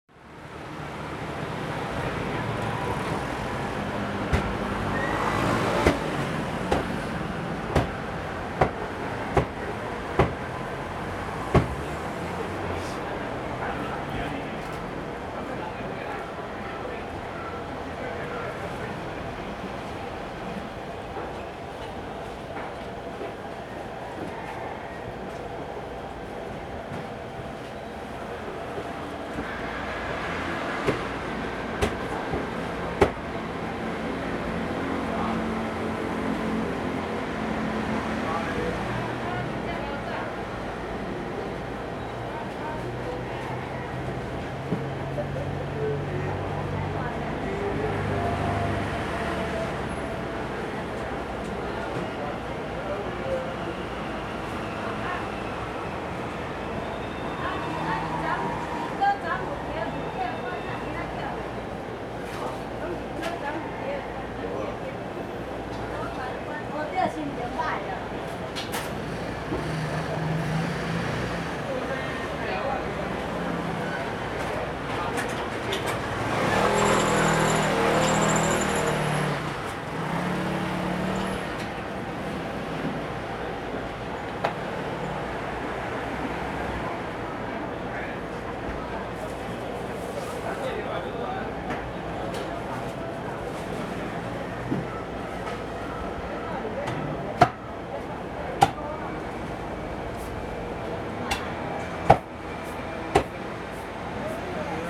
力行菜市場, Sanchong Dist., New Taipei City - In the Market
In the Market, Chicken sounds
Sony Hi-MD MZ-RH1 +Sony ECM-MS907